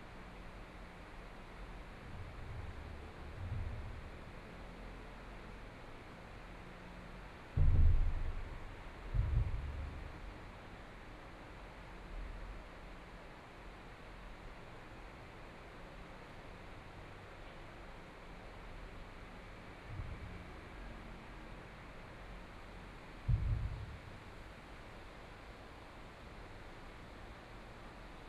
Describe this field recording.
In the bottom of the bridge, Binaural recordings, Zoom H4n+ Soundman OKM II